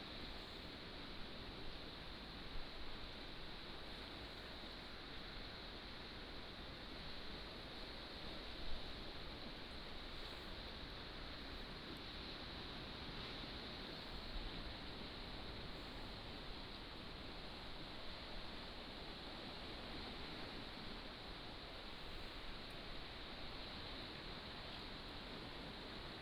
津板路, Nangan Township - sound of the waves
sound of the waves, Birdsong
連江縣, 福建省 (Fujian), Mainland - Taiwan Border